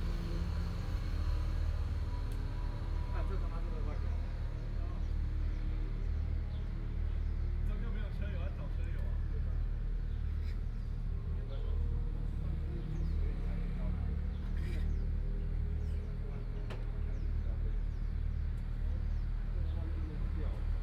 Next to the road, Holiday early morning, Very heavy locomotives on this highway, Binaural recordings, Sony PCM D100+ Soundman OKM II